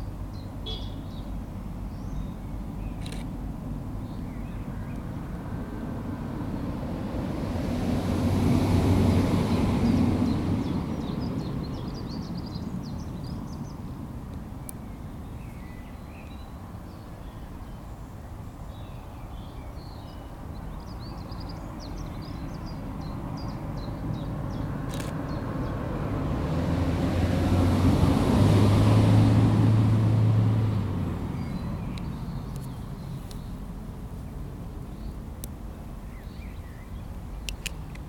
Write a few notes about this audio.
After enjoying a picnic with friends in field just behind middle farm, we lay out on the grass in the late spring sunshine trying to distinguish bird calls.